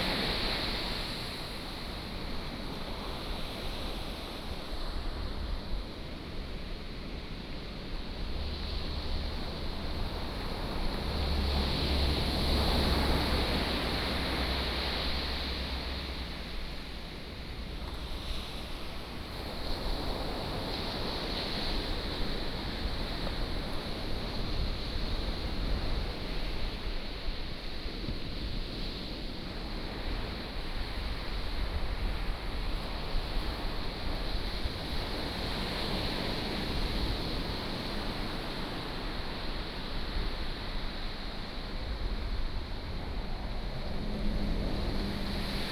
Traffic Sound, Sound of the waves, The weather is very hot